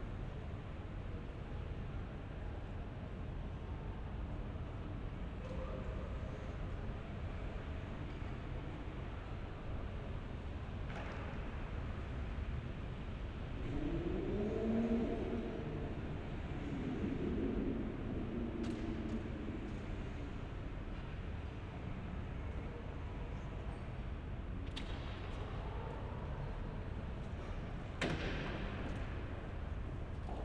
echoes and ambient in the huge entrance hall of Kontorenhaus. the building hosts agencies, small businesses, a restaurant and a hotel.
Berlin, Germany, 23 December 2010, 12:30